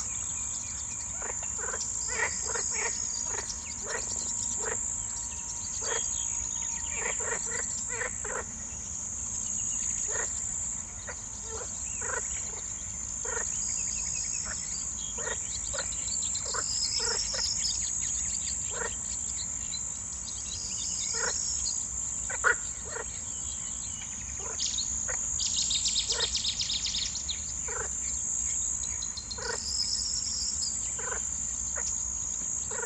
Neo Thronio coast, evening sounds - crickets, birds, frogs.
Coastal area with grasses. Corn bunting, Cettis warbler, crickets, frogs.